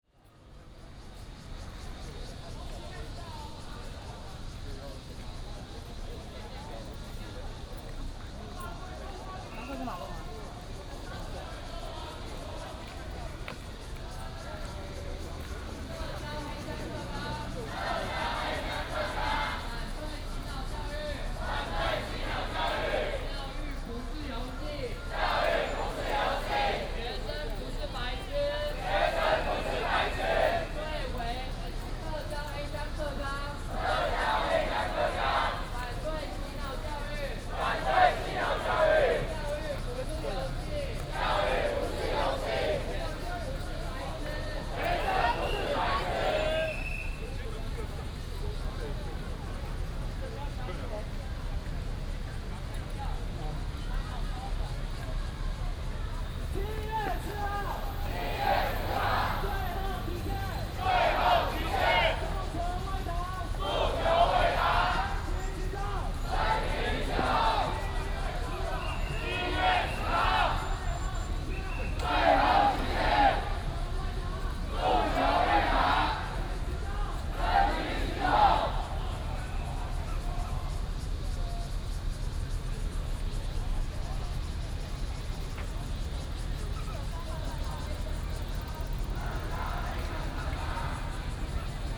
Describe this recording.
In the corner of the road, Protest march, Traffic Sound